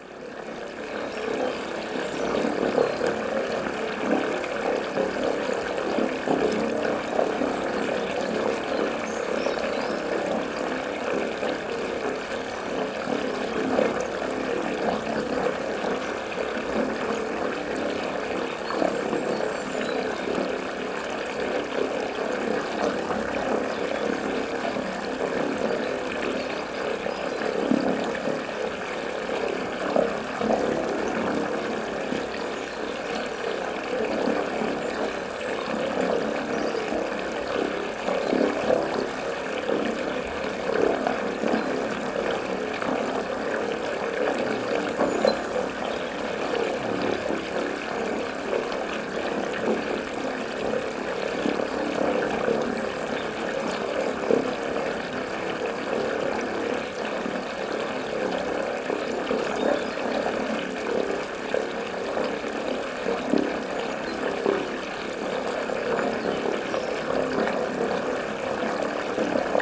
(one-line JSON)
{"title": "phasing water drain Tomar, Portugal", "date": "2013-04-15 08:48:00", "description": "a small under water channel brings water into a fountain", "latitude": "39.60", "longitude": "-8.42", "altitude": "133", "timezone": "Europe/Lisbon"}